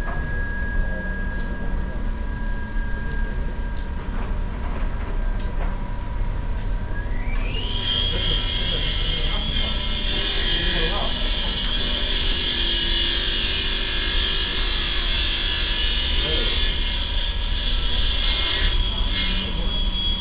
cologne, mülheim, berliner str, am marktplatz
morgens am durchgang vom marktplatz zu angrenzender starssenbahnlinie, das singen einer kreissage überliegt in intervallen dem allgemeinen treiben.
soundmap nrw: social ambiences/ listen to the people - in & outdoor nearfield recordings